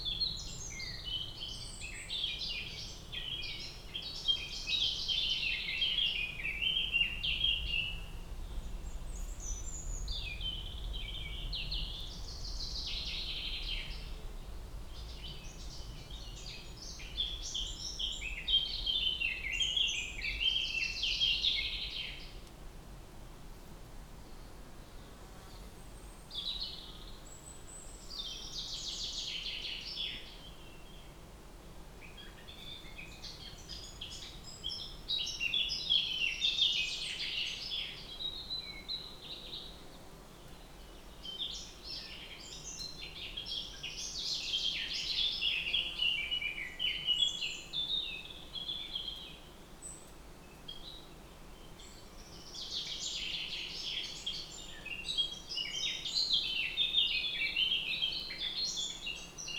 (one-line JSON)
{"title": "Planina Razor, Tolmin, Slovenia - Birds in forest", "date": "2022-06-25 10:04:00", "description": "Birds in forest.\nlom Uši Pro, MixPreII", "latitude": "46.24", "longitude": "13.77", "altitude": "1275", "timezone": "Europe/Ljubljana"}